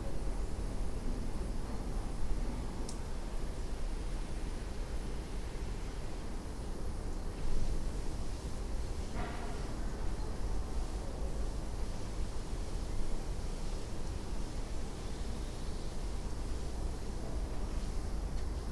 Behind Hart Street, Edinburgh, Edinburgh, UK - Watering the gardens behind the house where Catherine Hogarth was born
This is the sound of a small, green enclave behind the houses of Hart Street. The land is bordered on all sides by tall, Georgian buildings, and divided up into individual gardens. I was on the trail of Catherine Hogarth - the woman who later became Catherine Dickens when she married Charles Dickens - when I found this little patch of green. Catherine was born at 8 Hart Street but, as we learned from a knowledgeable resident, all the houses on the even numbered side of this street were joined together to form a hotel, then divided up again into private residencies, so "Number 8" no longer exists! In trying to hear where Catherine played or grew up as a young girl, the atmosphere of this small green patch and the general ambience of the street is the closest I may get.
22 March, ~13:00